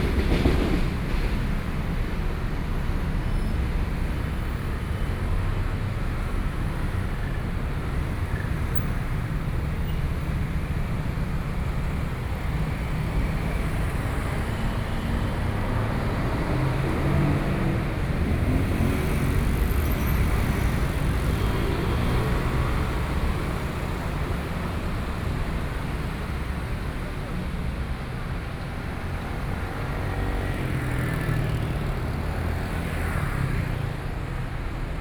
Taoyuan - Traffic Noise
Traffic Noise, Underpass, Train traveling through, Sony PCM D50 + Soundman OKM II